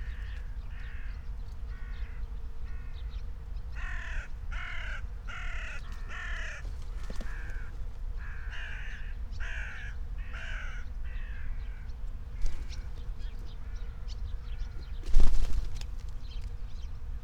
Berlin, Tempelhofer Feld - field ambience /w birds and aircraft
09:31 Berlin, Tempelhofer Feld - field ambience at morning
26 July 2021, 09:31